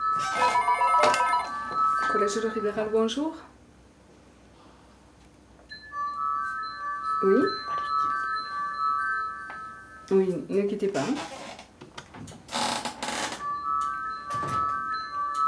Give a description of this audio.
Dans la loge. Sonnerie du portail (depuis l'extérieur). Sonnerie du téléphone. Sonnerie du portail (depuis l'intérieur). Preneur de son : Arnaud.